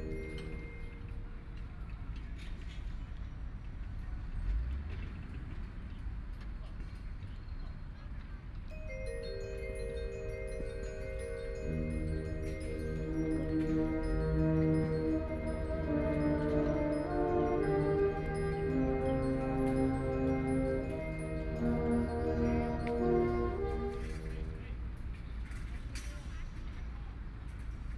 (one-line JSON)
{"title": "Chiang Kai-shek Memorial Hall, Taipei - High School Band", "date": "2013-05-25 10:01:00", "description": "High school marching band is practicing, Sony PCM D50 + Soundman OKM II", "latitude": "25.04", "longitude": "121.52", "altitude": "15", "timezone": "Asia/Taipei"}